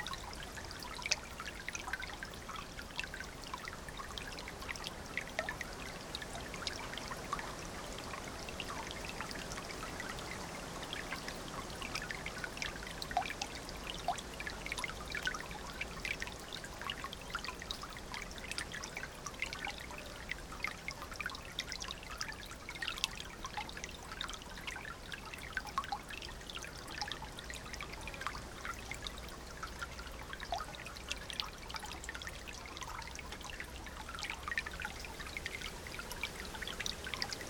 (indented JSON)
{
  "title": "Stoborough Heath National Nature Reserve, UK - Tiny stream sounding like a musical instrument",
  "date": "2020-09-20 15:35:00",
  "description": "A beautiful Sunday afternoon walk across the reserve, Linnets and a Wheatear, with Ravens and a myriad of insects scratching away in the landscape. A small wooden bridge strides a tiny stream with the most wonderful tinkling water sounds spilling into the air as we cross. Sony M10, inbuilt mics.",
  "latitude": "50.67",
  "longitude": "-2.09",
  "altitude": "11",
  "timezone": "Europe/London"
}